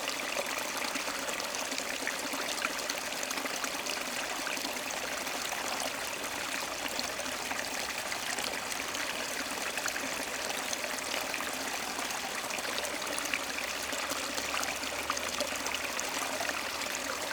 Genappe, Belgique - Ry d'Hez river
The Ry d'Hez river, flowing in a big wood jam.